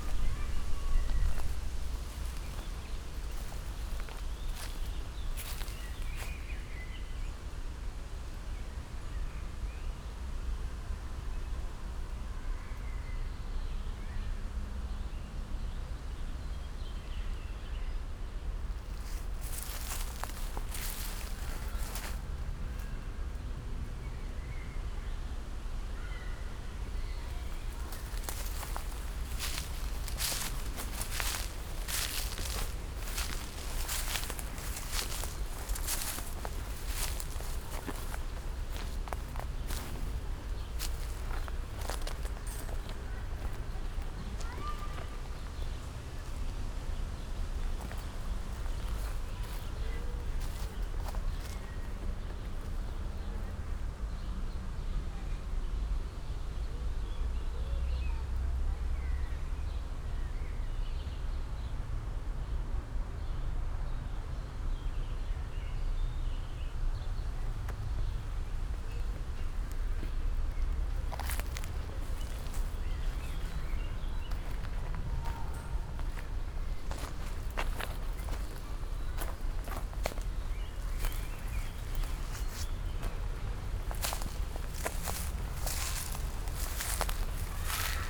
slow walk through the nice garden landscape between Beermanstr. and Kieffholzstr., along a newly build strange sanctuary for lizards. never sen one here before though.
Sonic exploration of areas affected by the planned federal motorway A100, Berlin.
(SD702, DPA4060 binaural)
17 May 2013, 16:40